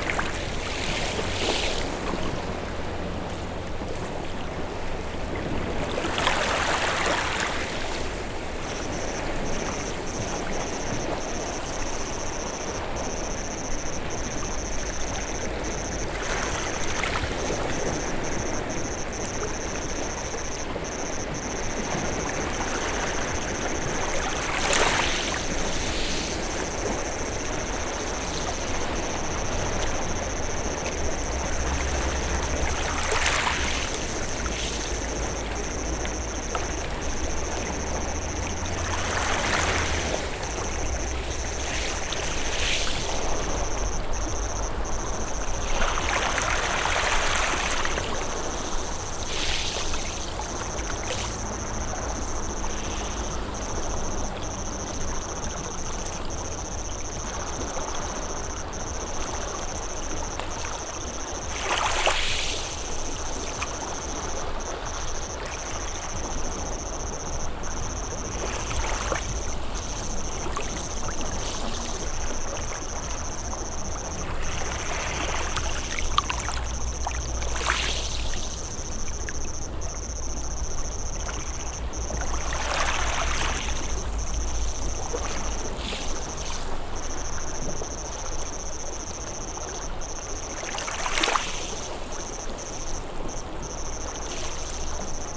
Bd Stephanopoli de Comene, Ajaccio, France - les Sanguinaires Ajaccio
wave and water sound
Captation ZOOM H6